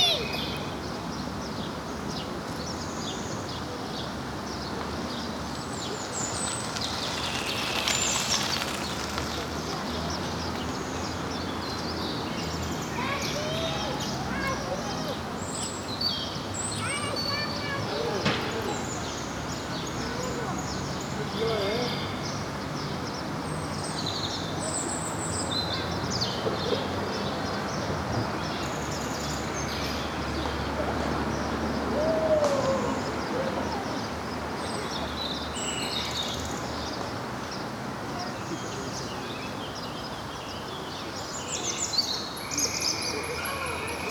Manlleu, Barcelona, España - Passeig del Ter 2
Passeig del Ter 2